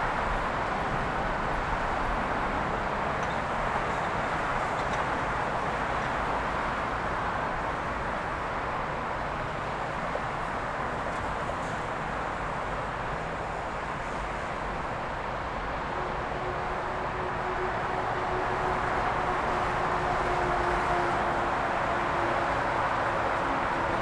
Husův kámen, Jižní spojka, Praha, Czechia - Friday Afternoon traffic on the Prague Ring Bypass
From the high vantage point of Husův Kamen (near Slatiny), I record the oceanic roar of the late Friday afternoon traffic on the main urban ring bypass (městský okruh) of Prague.
Praha, Česká republika